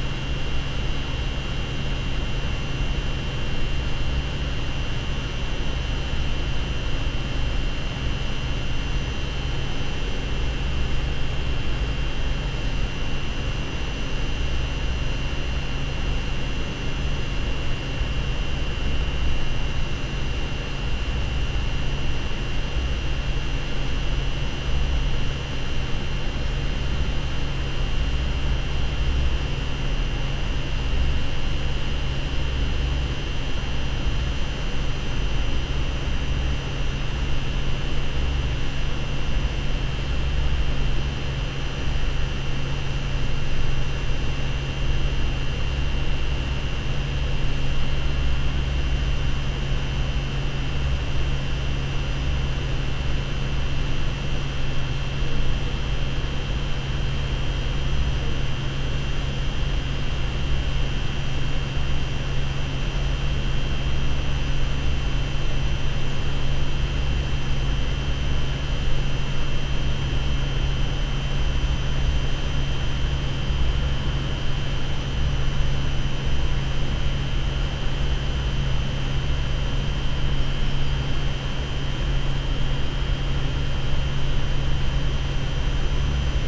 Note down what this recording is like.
The long and haunting sound of a boiler room. The heaters produce warmth for a major part the university, so in fact, more than an half of the city.